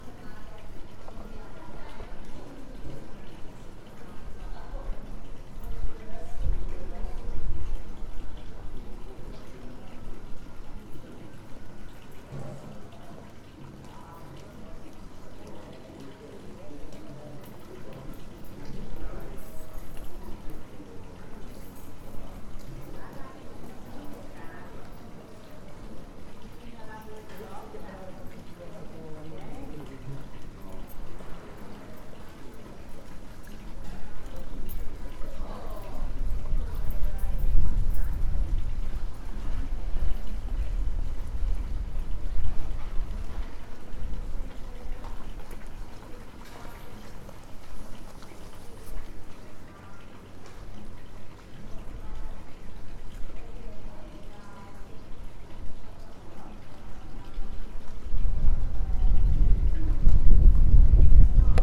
Aosta AO, Italia - Rue Croix de Ville
Enregistrement dans la rue Croix de Ville, Centre de la Ville d'Aoste. Piétons, pas, fontaine, voix, oiseaux. Eté 2013
VDA, Italia, European Union, 17 June, 15:00